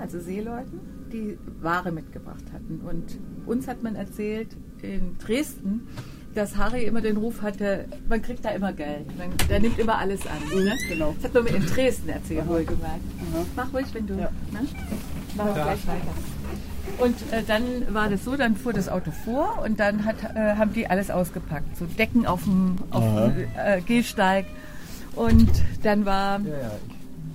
Harrys Hamburger Hafenbasar
Aus der Serie "Immobilien & Verbrechen". Die geheimen Kellersysteme von St. Pauli und ihre Erfinderinnen.
Keywords: Gentrifizierung, St. Pauli, Chinatown, Hafenstraße, NoBNQ - Kein Bernhard Nocht Quartier
31 October 2009, 15:15